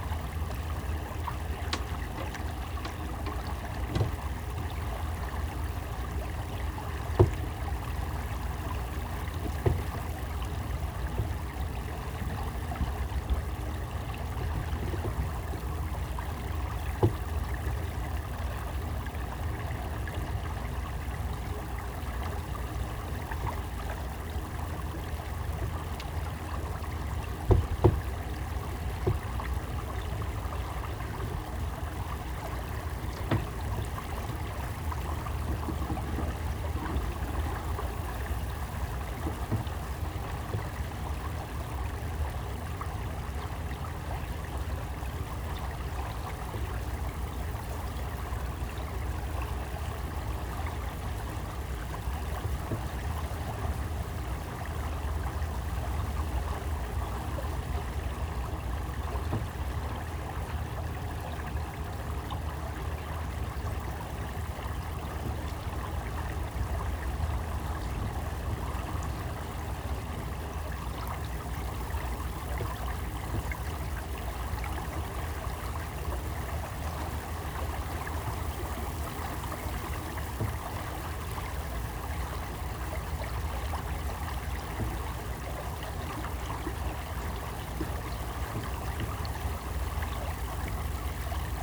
Electric boat ripples, Horsey Broad, Great Yarmouth, UK - Electric boat rippling through water channel in reeds
A beautiful March day on a slow moving small electric boat hired for 2 hours to enjoy the broads and channels in this peaceful landscape. Several Marsh Harriers were gliding overhead, sometimes displaying to each other. The electric motor sound is audible but compared to diesel thankfully quiet.